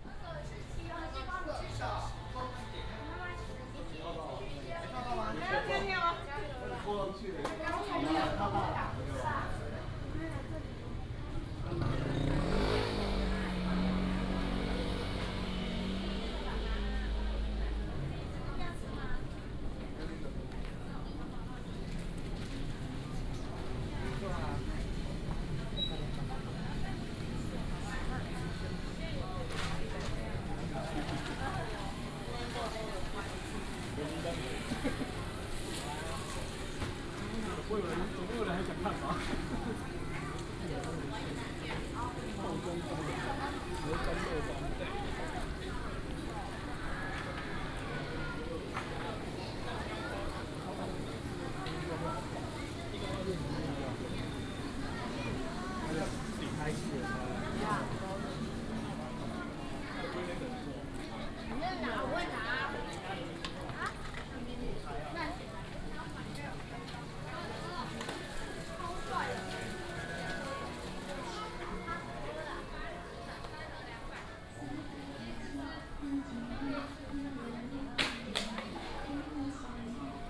Zhongzheng Rd., Hengshan Township - walking in the Street
Shopping Street, tourist, Many students
Hengshan Township, Hsinchu County, Taiwan, 17 January, 12:48